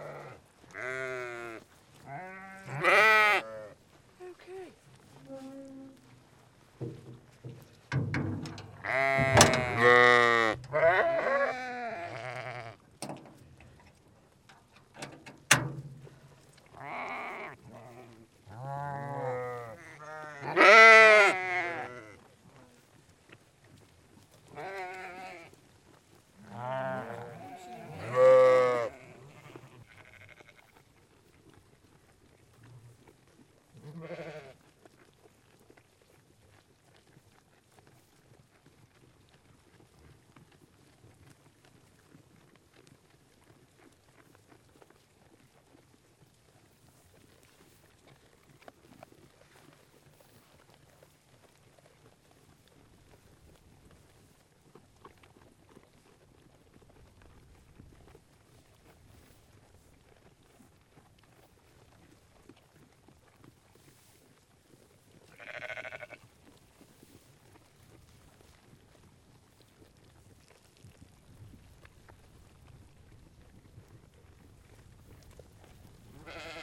Another recording of Amy's sheep. In this recording you can hear us opening and closing the little trailer on the back of the quad bike, with hay for the sheep in it.